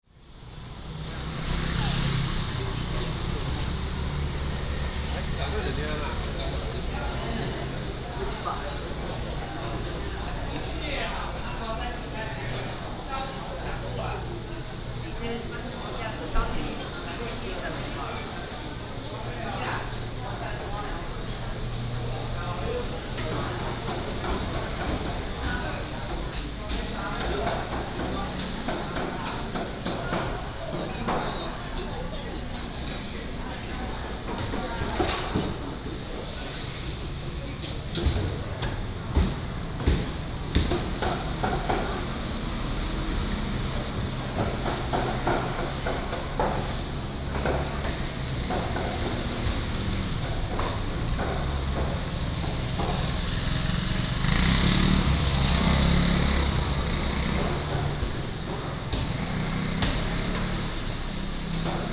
伸港公有零售市場, Shengang Township - Walking in the indoor market
Walking in the indoor market, Traffic sound, Vendors
Shengang Township, Changhua County, Taiwan, 15 February 2017, 09:48